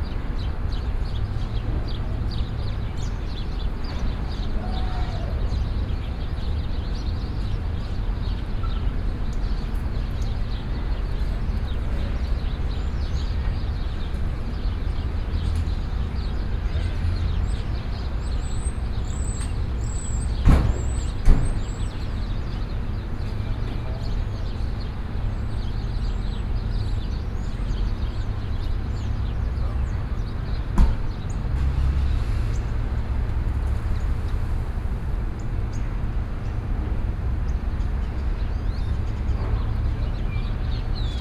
USA, Virginia, Washington DC, Birds, Binaural